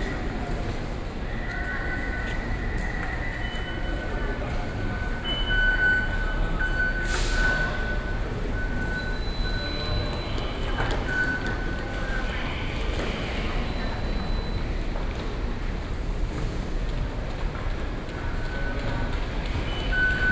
Minquan W. Rd. Station, Taipei City - In the MRT station hall
Taipei City, Taiwan, 5 December 2012